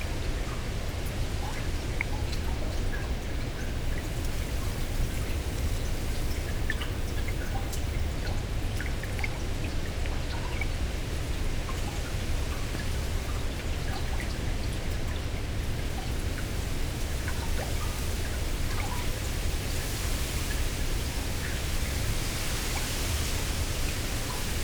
Reeds grow in water; the tall stems and leaves catch the sun and wind above, while the roots are in the mud below. This track is a mix of normal mics listening to wind in the reeds combined with a mono underwater mic - in sync and at the same spot - picking up the below surface sound. The very present bass is all from the underwater mic. I don't know what creates this, maybe its the movement of the whole reed bed, which is extensive, or the sound of waves pounding the beach 300meters away transmitted through the ground.